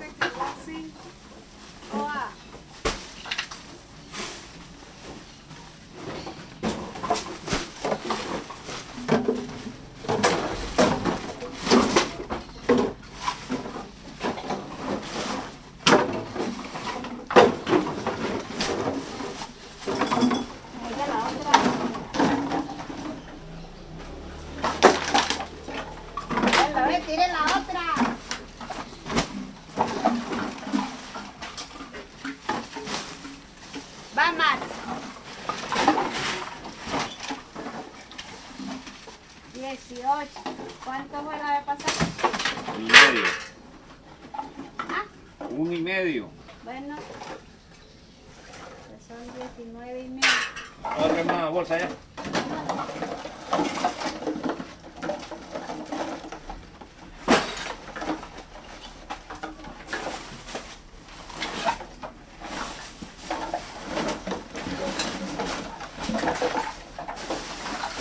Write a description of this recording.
En la bodega de reciclaje de Mompox, una máquina compacta el material que traen reciclados del pueblo y de poblaciones cercanas.